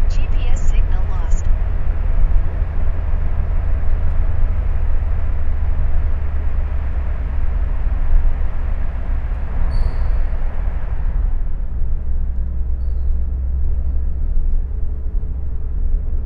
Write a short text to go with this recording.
A drive through the Sydney Harbour Tunnel.